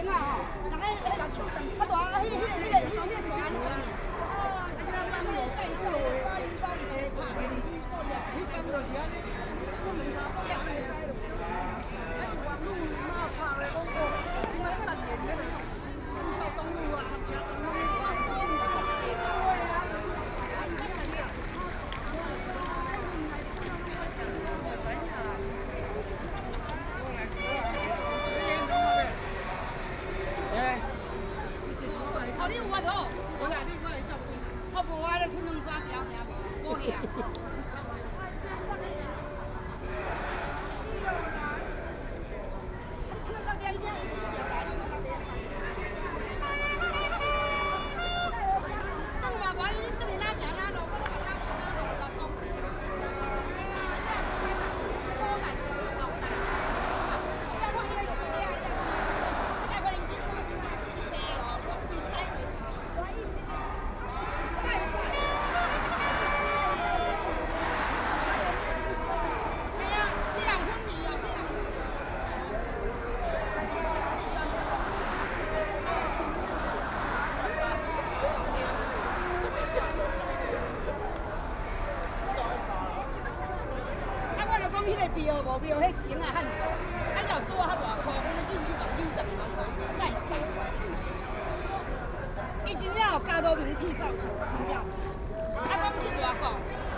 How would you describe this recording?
No-nuke Movement occupy Zhong Xiao W. Rd.